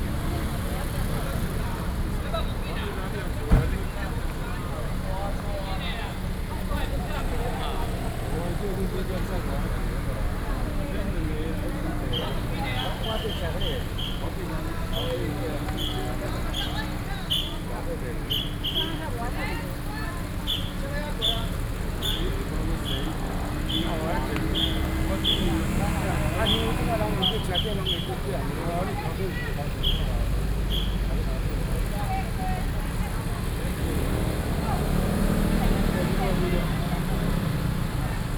隆山路, 三芝區茂長里 - Walking through the traditional fair parade
Walking through the traditional fair parade, Traffic Sound
Binaural recordings, Sony PCM D50